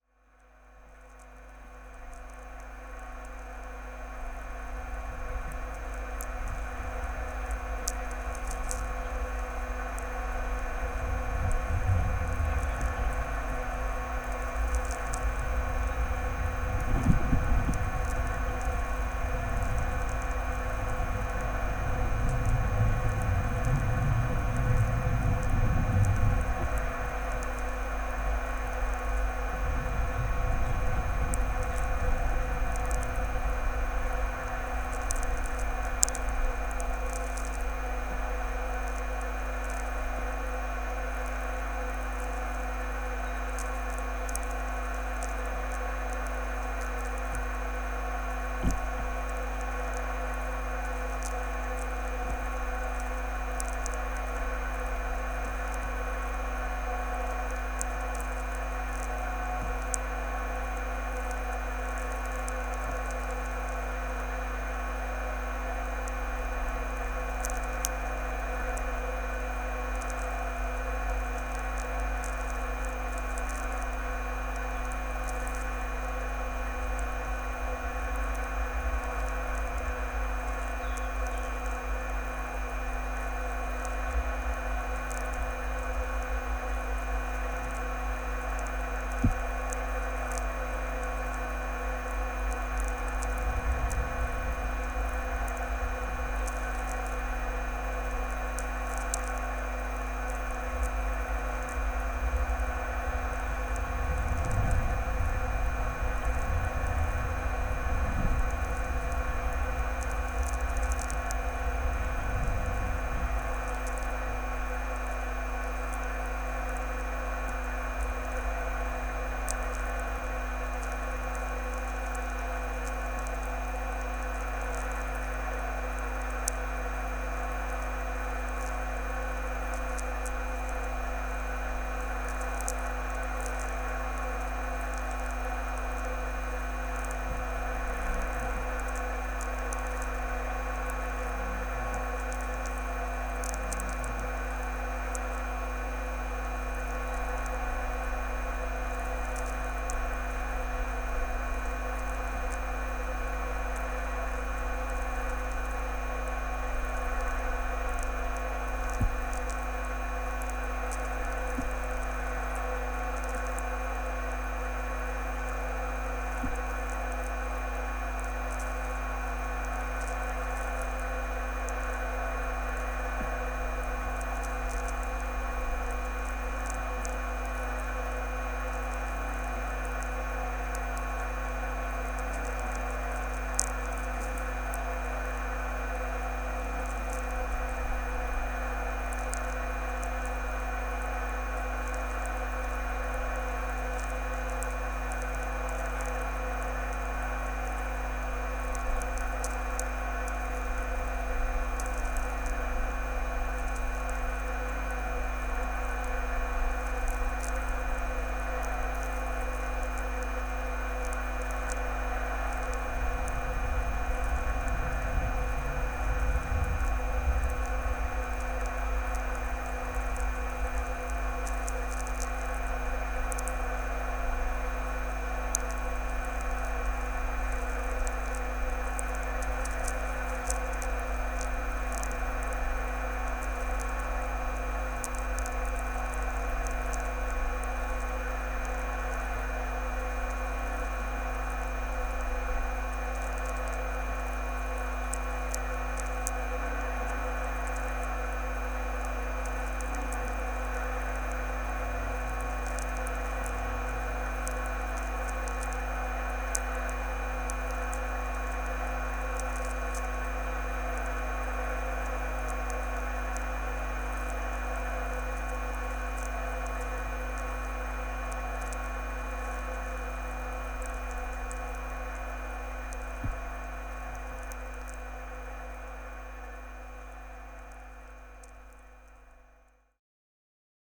Antalieptė, Lithuania, study of a pole
old metallic pole near hydroelectric ppower station. recorded with contact microphones and diy electromagnetic listening antenna Priezor